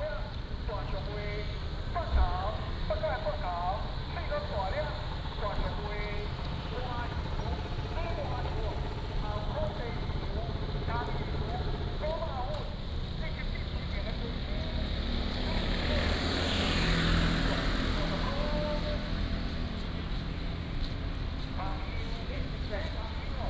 Xinying Rd., Magong City - The entrance to the market
The entrance to the market, the fish market
22 October 2014, 06:22